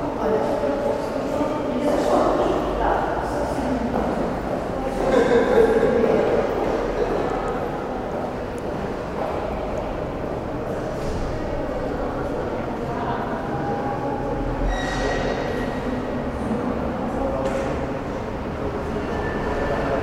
Nossa Senhora do Pópulo, Portugal - Varanda Interior
Balcony inside main entrance. Recorded with Sony PCM-D50
Caldas da Rainha, Portugal, 4 March 2014